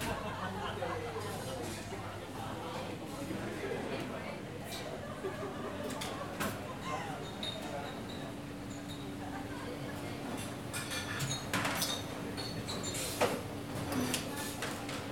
Calle Marx, Madrid, España - Faculty Of Filosophy, Cafeteria (Dinamic sound)
This audio shows a walk through the faculty cafeteria.
You can hear:
- Entrance door sound
- Sound of cooking (dishes washing, glasses crashing, cutlery...)
- Coffee maker sound
- People talking
- Sound of chairs crawling
- Trolley sound for moving trays
- Exit door sound
- Cristina Ortiz Casillas
- Daniel Deagurre León
- Erica Arredondo Arosa
Gear:
- Zoom H4n.